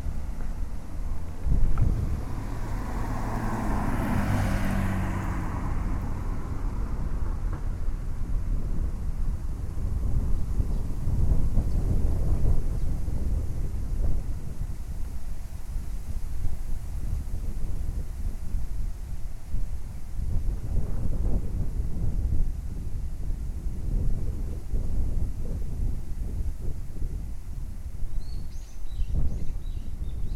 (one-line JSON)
{"title": "car and wind", "date": "2010-06-16 13:35:00", "description": "愛知 豊田 wind car", "latitude": "35.14", "longitude": "137.15", "altitude": "89", "timezone": "Asia/Tokyo"}